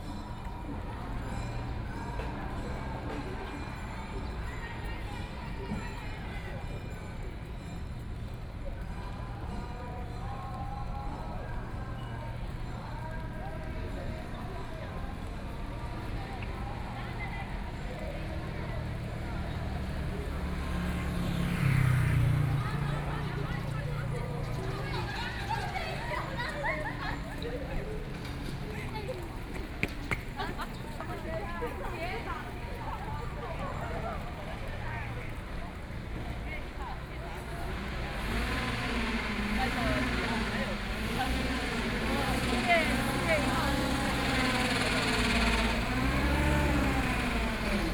中山區集英里, Taipei City - traditional market
Walking through the traditional market, Traffic Sound
Sony PCM D50+ Soundman OKM II